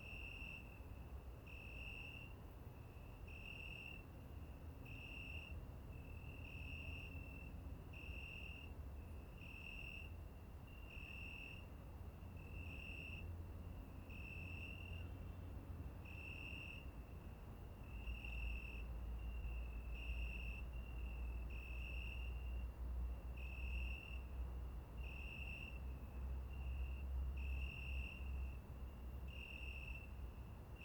Dendraium, Chișinău, Moldova - The Cricket Symphony from the Dendrarium Park
The Cricket Symphony made in September from the Dedrarium Park. Enjoy!
12 September 2018, 21:00